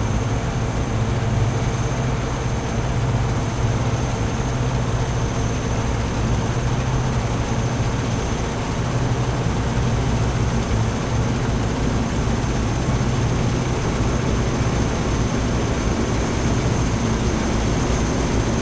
SBG, El Pujol - Siega
En las dos primeras semanas de Julio tiene lugar la siega en prácticamente todos los campos de la zona. Aquí una segadora realizando su faena en el campo cercano a El Pujol. WLD
St Bartomeu del Grau, Spain, 17 July